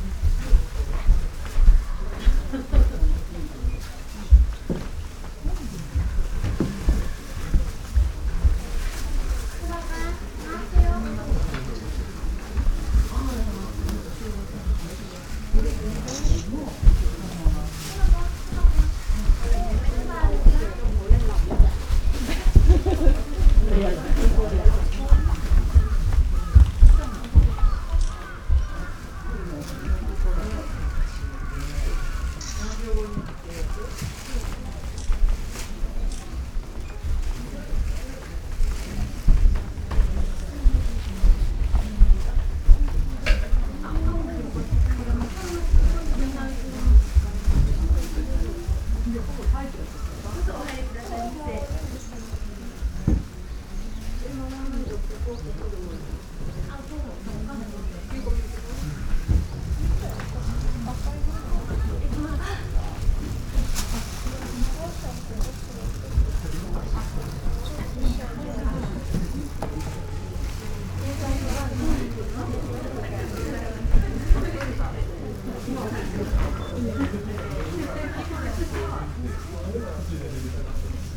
dry landscape garden, Kodai-ji, Kyoto - graveled ocean
gardens sonority, veranda
white and violet parasols
hundred of them
stacked into rain grayish gravel ocean
november, time to take longer path
Kyōto-shi, Kyōto-fu, Japan, 9 November 2014